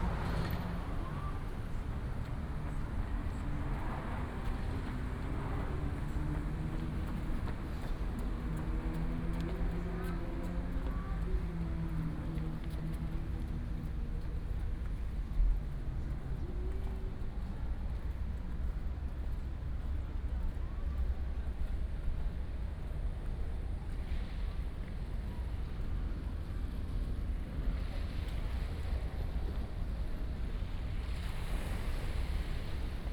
Walking along the fishing port